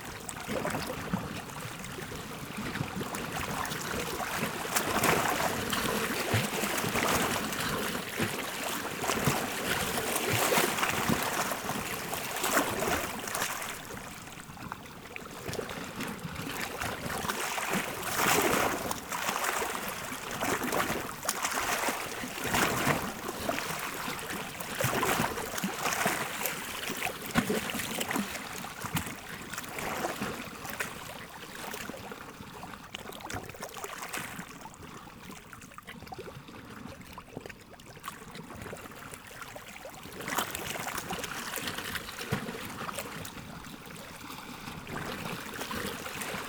Hayravank, Arménie - Sevan lake
Sound of the Sevan lake, a beautiful blue and cold water lake, near the Hayravank monastery.
Hayravank, Armenia, 4 September 2018